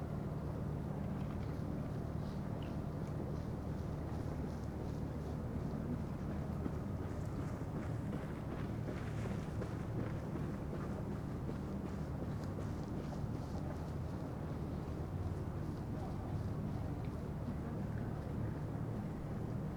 workers attach towboat to coal barges, cracking ice of the frozen spree river, promenaders
the city, the country & me: january 26, 2014
Berlin, Germany, 26 January